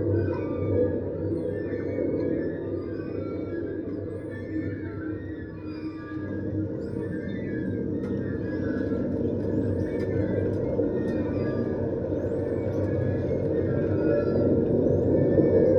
Plaza Simon Bolivar, Valparaíso, Chile - playground swings and train, contact mic
stereo contact microphone attached to the railing around a kid's train